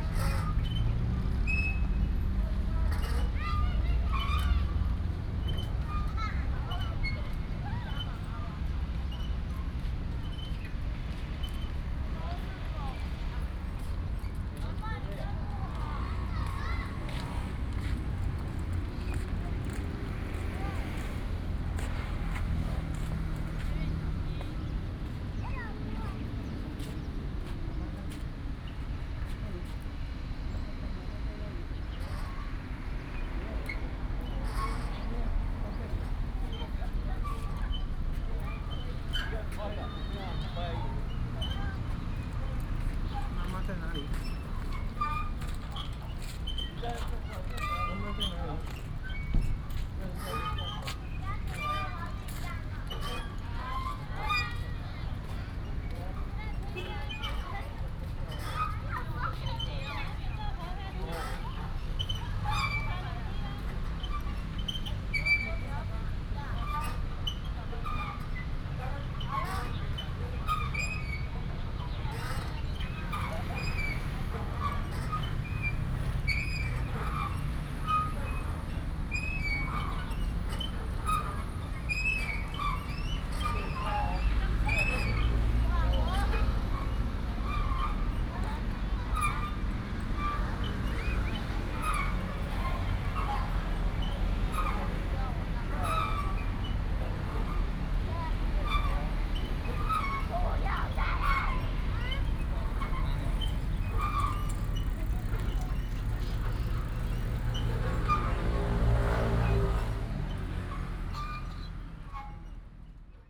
Shalun Rd., Tamsui Dist., New Taipei City - Swing
Traffic Sound, Children Playground, Sitting next to the park, Swing